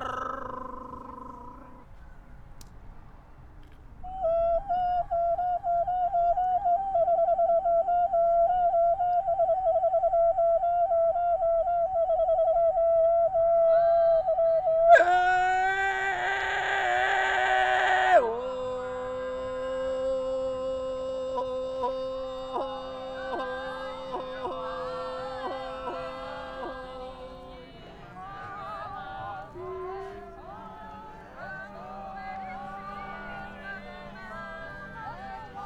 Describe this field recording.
final of the Tempeltofu performance